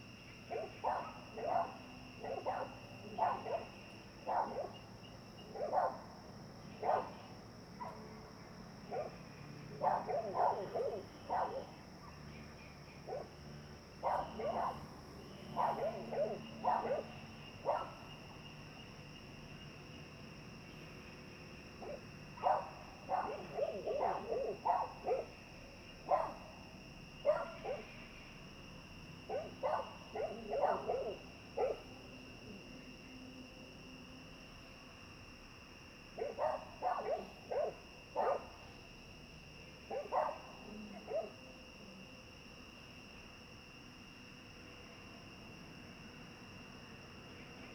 TaoMi Li., 青蛙阿婆民宿 埔里鎮 - at the Hostel
Dogs barking, Frogs chirping, at the Hostel, Sound of insects
Zoom H2n MS+XY
29 April 2015, 22:06